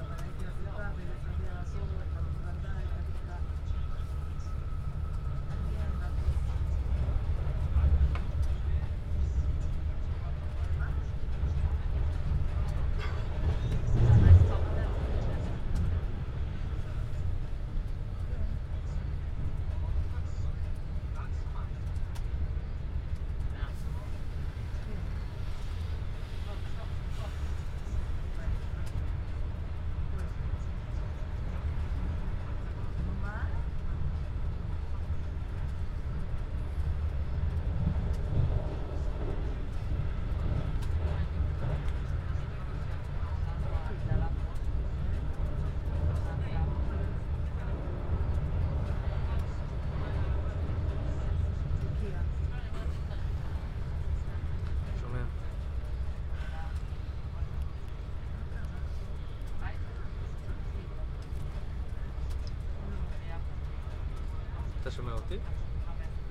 {"title": "התעש, רמת גן, ישראל - in the train", "date": "2021-03-24 12:45:00", "description": "a recording from my seat in the train", "latitude": "32.09", "longitude": "34.80", "altitude": "6", "timezone": "Asia/Jerusalem"}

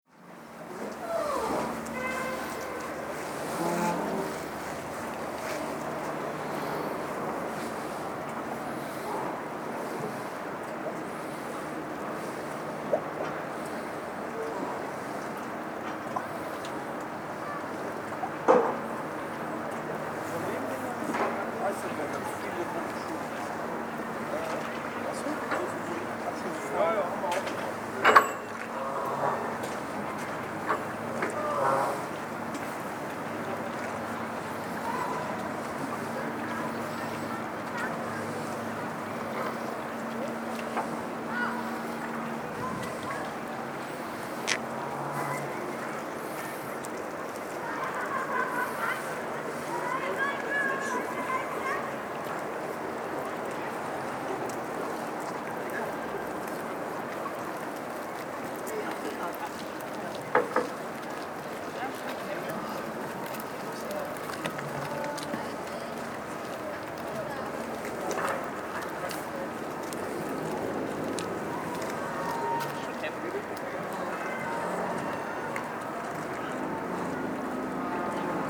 {"title": "tondatei.de: hamburg, hafencity, museumshafen - museumshafen atmo", "date": "2010-03-28 16:28:00", "description": "wasser, hafen, kai, schiffe, möwen", "latitude": "53.54", "longitude": "9.99", "altitude": "1", "timezone": "Europe/Berlin"}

